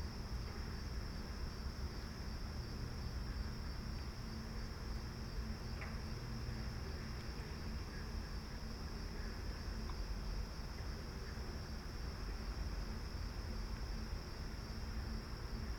Brighton, MI raccoons, Winans Lake
World Listening Day 7/18/10, 2:10 AM. Winans Lake, Brighton MI. Raccoons, Green Frogs, Air Conditioners, Traffic.